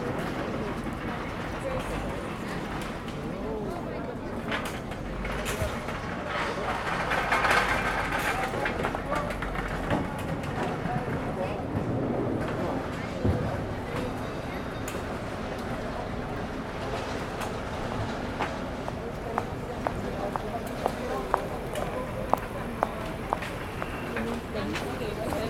am Marktplatz räumen Händler ihre Stände zusammen und verladen sie auf Transporter und LKWs | on the marketplace traders remove their stalls and load them on trucks and vans
Sachsen, Deutschland, European Union